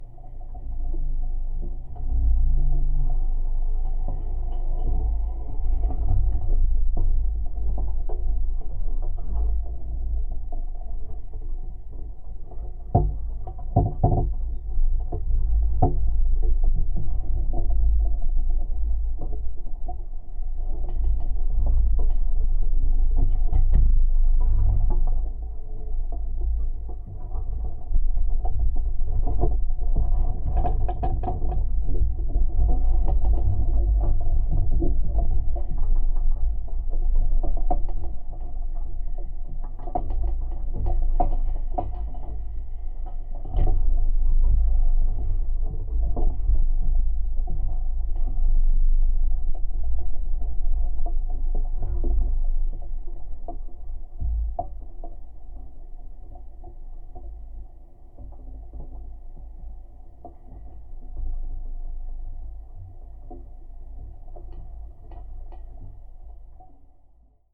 Tent University of Kansas, Lawrence, Kansas, USA - KU Tent
Geophone recording from metal support of tent erected in front of Stauffer-Flint Hall at University of Kansas to allow students to study outdoors.